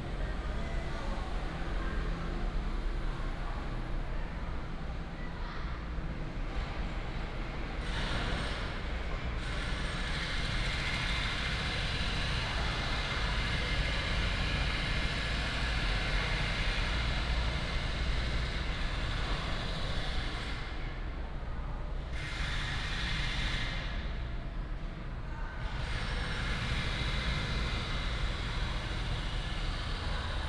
Zhuzhong Station, 新竹縣竹東鎮 - Construction sound
In the station hall, Construction sound